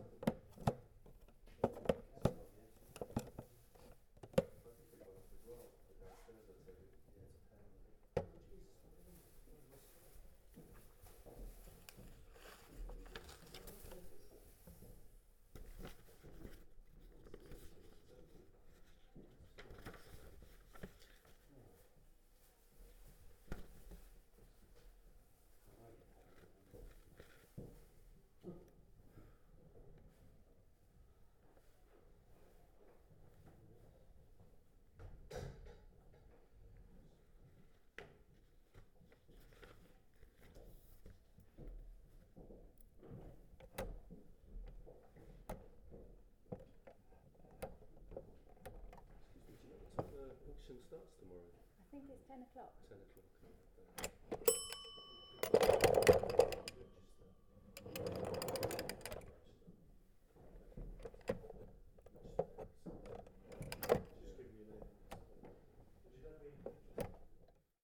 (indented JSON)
{
  "title": "Jacksons of Reading, Reading, UK - closeup recording of the vintage tills",
  "date": "2014-01-03 17:01:00",
  "description": "This is similar to the earlier recording from the same day, but the bells have been recorded much more closely by placing a recorder insider the wooden till.",
  "latitude": "51.46",
  "longitude": "-0.97",
  "altitude": "45",
  "timezone": "Europe/London"
}